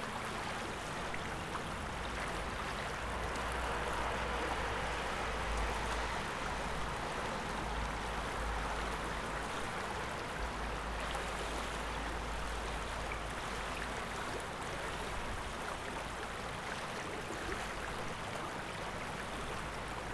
Łyna-Zamek - River Łyna near Olsztyn's castle (2)
Without footsteps sound.
21 November 2011, 16:15